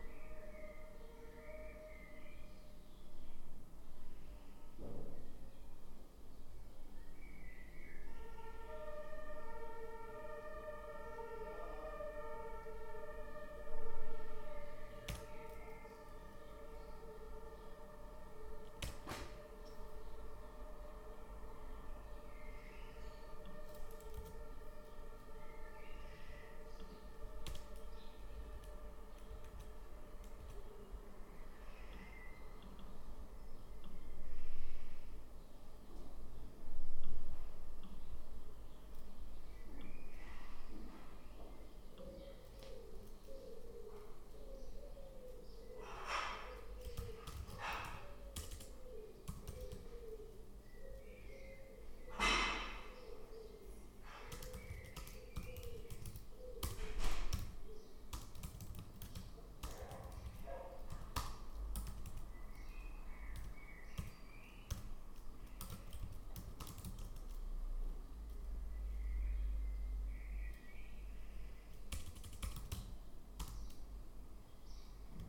{"title": "Kreuzbergstraße, Berlin, germany - in the kitchen", "date": "2022-06-28 18:10:00", "description": "open window and activities in the kitchen. a day before taking train with antoine (his voice is also in this recording) and others to istanbul. in the mood of preparing...\n2 x dpa 6060 mics", "latitude": "52.49", "longitude": "13.38", "altitude": "43", "timezone": "Europe/Berlin"}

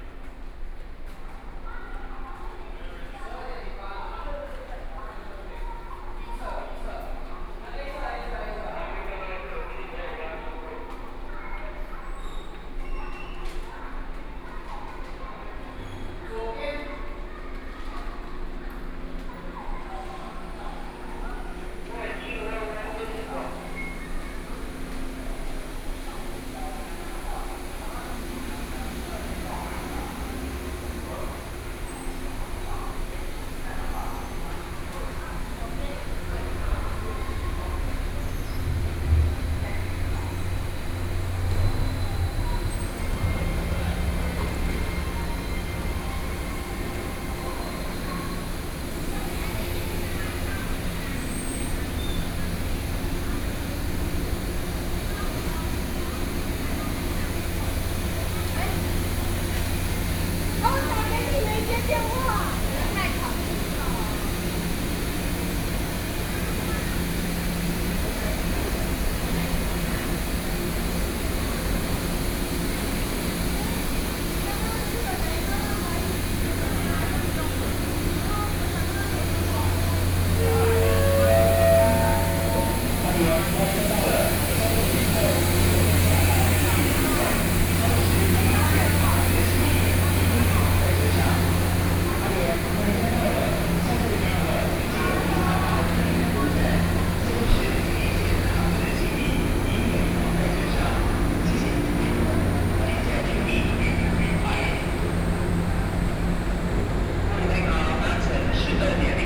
{"title": "Hualien Station, Hualien City - Walking in the station", "date": "2014-08-29 12:09:00", "description": "Walking in the station, From the station hall, Through the underpass, Then toward the station platform", "latitude": "23.99", "longitude": "121.60", "timezone": "Asia/Taipei"}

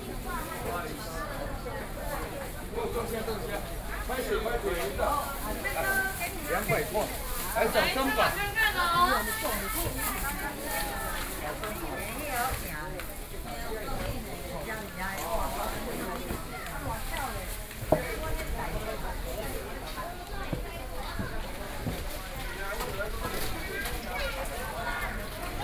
{"title": "景美市場, Wenshan District - Traditional markets", "date": "2012-11-07 07:46:00", "latitude": "24.99", "longitude": "121.54", "altitude": "20", "timezone": "Asia/Taipei"}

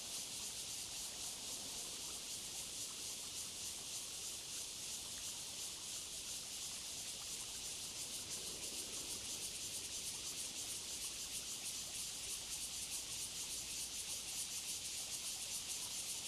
{"title": "隆昌村, Donghe Township - Cicadas sound", "date": "2014-09-06 17:30:00", "description": "Cicadas sound, Distant traffic sounds and, sound of the waves\nZoom H2n MS+ XY", "latitude": "22.94", "longitude": "121.27", "altitude": "31", "timezone": "Asia/Taipei"}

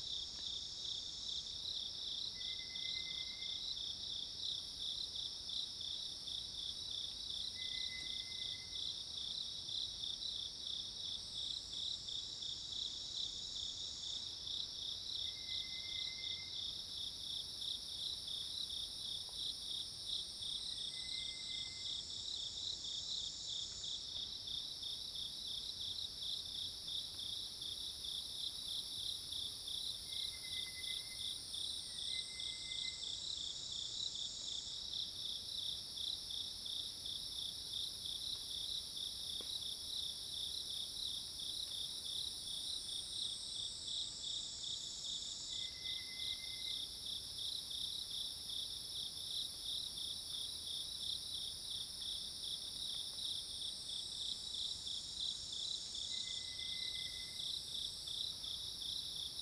{"title": "Hualong Ln., Yuchi Township, 南投縣 - Facing the woods", "date": "2016-09-19 06:57:00", "description": "Insects called, Birds call, Cicadas cries, Facing the woods\nZoom H2n MS+XY", "latitude": "23.93", "longitude": "120.89", "altitude": "777", "timezone": "Asia/Taipei"}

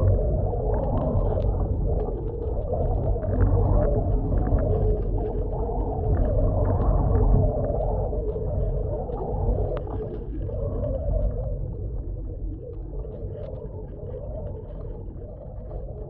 {"title": "Wreck Beach Reeds - Windy Reeds", "date": "2017-02-26 16:00:00", "description": "After descending the endless steps downward and onto the beach I turned left and walked behind the sand towards a stand of Bull Reeds dancing in the wind.\nRecording is made using 2 Contact Microphones, one attached to a seperate stalk to reed.\nWhat you can hear is the internal drone of the wind passing (playing ?) through the reed. The scratching is various reeds rubbing against eachother.", "latitude": "49.26", "longitude": "-123.26", "timezone": "America/Vancouver"}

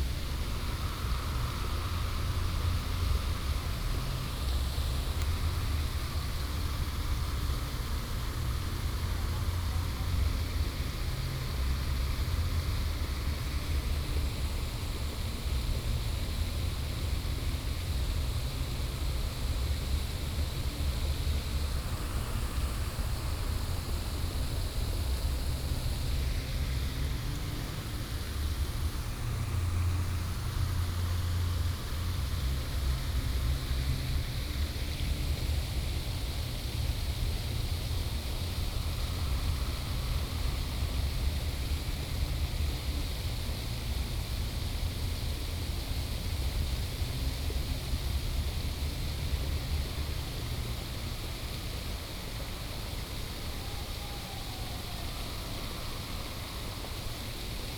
{"title": "台大醉月湖, Taipei City - At the lake", "date": "2015-07-25 19:15:00", "description": "At the lake, A distant shout", "latitude": "25.02", "longitude": "121.54", "altitude": "17", "timezone": "Asia/Taipei"}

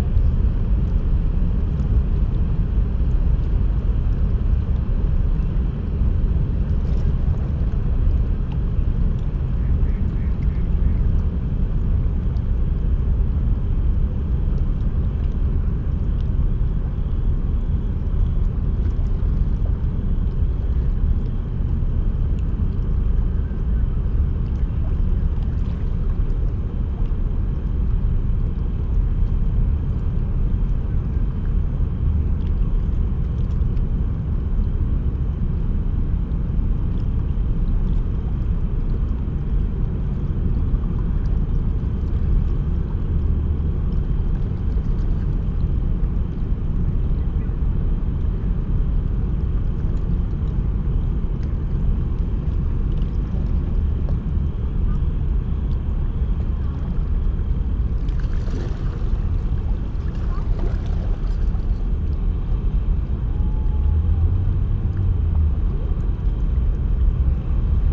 {"title": "Rheinufer, Köln, Deutschland - ship traffic", "date": "2012-09-10 20:40:00", "description": "late summer evening at the Rhein river bank, cargo ships passing, deep drones of the engines.\n(LS5, Primo EM172)", "latitude": "50.95", "longitude": "6.97", "altitude": "37", "timezone": "Europe/Berlin"}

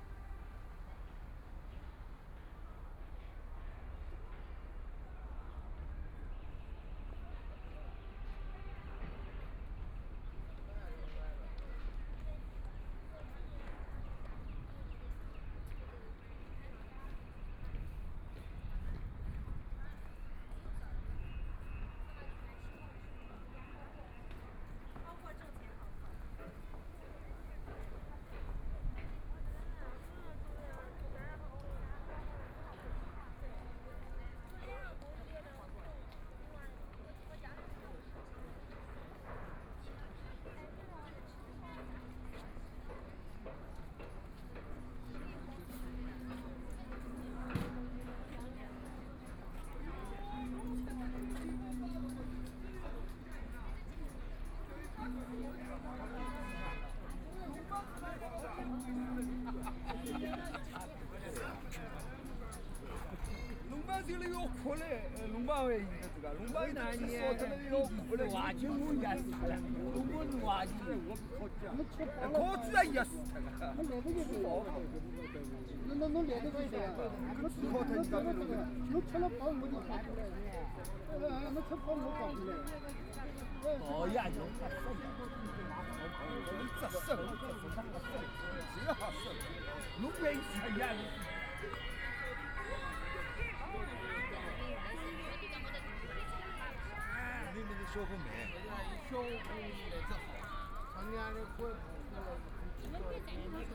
{"title": "City Park, Shanghai - Walking through the Park", "date": "2013-11-25 14:30:00", "description": "Walking through the Park, Construction site noise, traffic sound, Binaural recording, Zoom H6+ Soundman OKM II", "latitude": "31.23", "longitude": "121.49", "altitude": "10", "timezone": "Asia/Shanghai"}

